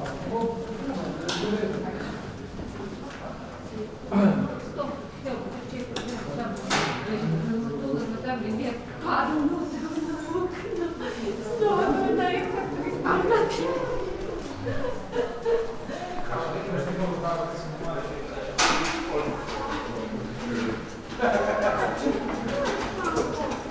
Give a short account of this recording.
grey and cold spring monday, walking the streets ... passers-by wearing audible clothing